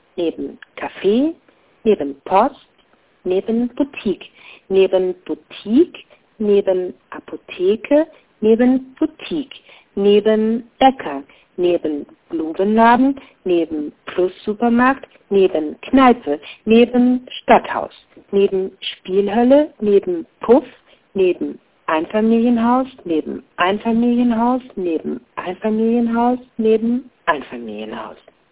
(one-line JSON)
{"title": "Kurze Visite in Vechta - Kurze Visite in Vechta 10.07.2007 22:46:26", "latitude": "52.73", "longitude": "8.29", "altitude": "36", "timezone": "GMT+1"}